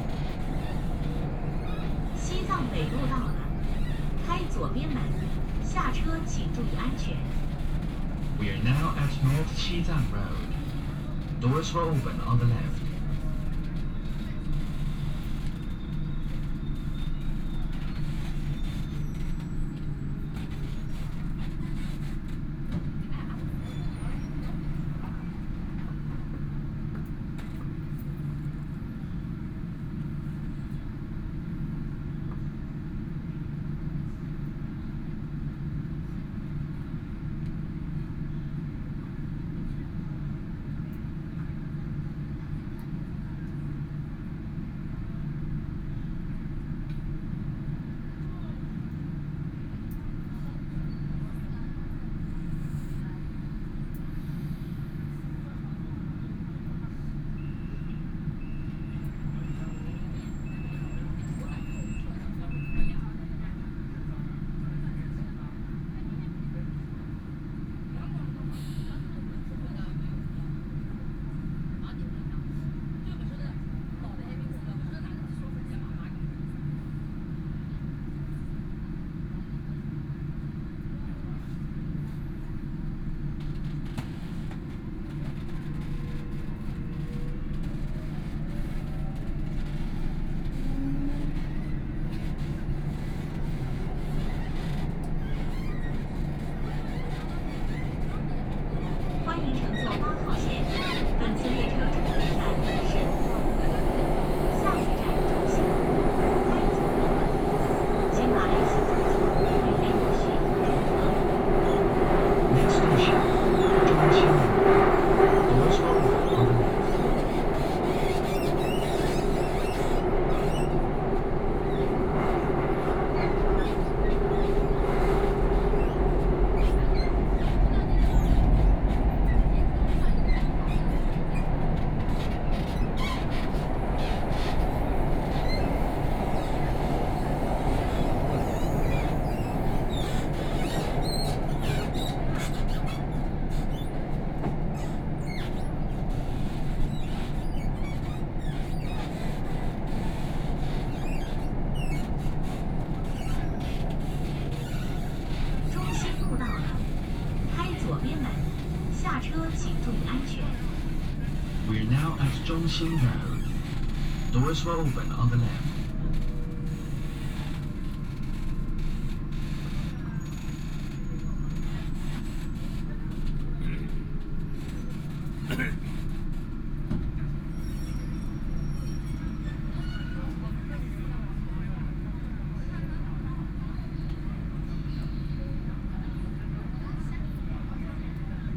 Zhabei District, Shanghai - Line 8 (Shanghai Metro)
from Hongkou Football Stadium station to Qufu Road station, erhu, Binaural recording, Zoom H6+ Soundman OKM II
Zhabei, Shanghai, China, 3 December 2013